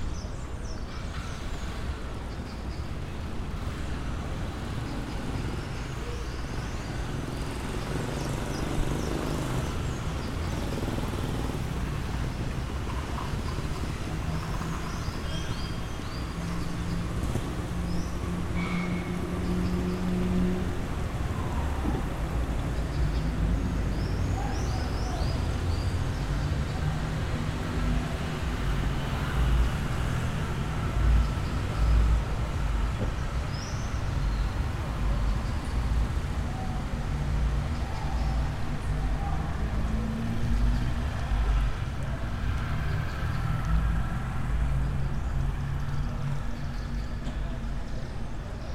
Captação feita em uma manha pouco movimentada na Praca da Matriz, zona comercial da cidade de Cruz Das Almas-Bahia. Aparelho utilizado um PCM DR 40.
Bahia, Brazil, 2 March 2014, 09:00